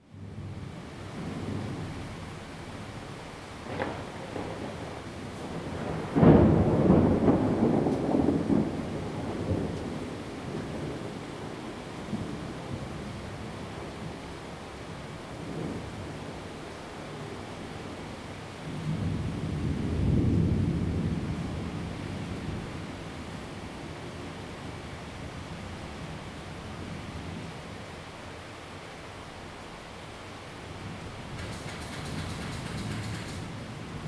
Rende 2nd Rd., Bade Dist. - thunderstorm
Thunderstorms, The sound of woodworking construction
Zoom H2n MS+XY+ Spatial audio
Taoyuan City, Taiwan, 6 July 2017